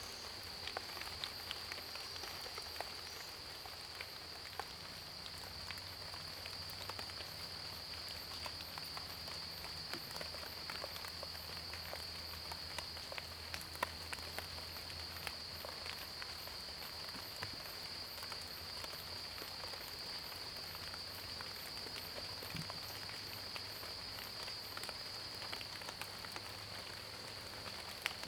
In the bamboo forest, Raindrop sound, Cicadas cries, Many leaves on the ground
Zoom H2n MS+XY
Puli Township, 水上巷, 17 September